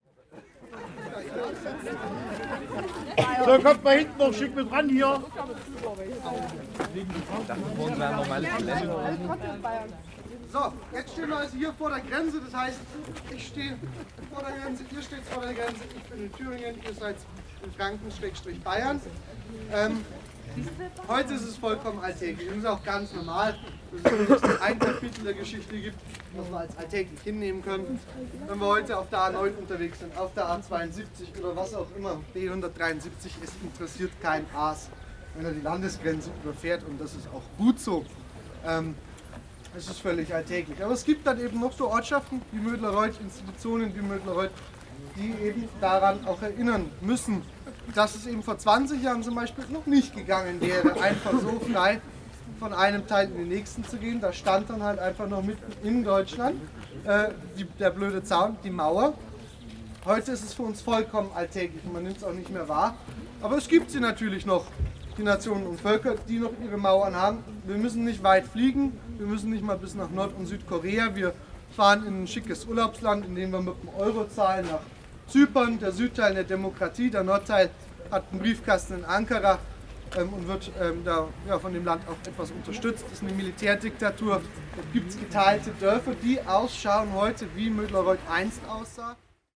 moedlareuth - deutsch-deutsches museum
Produktion: Deutschlandradio Kultur/Norddeutscher Rundfunk 2009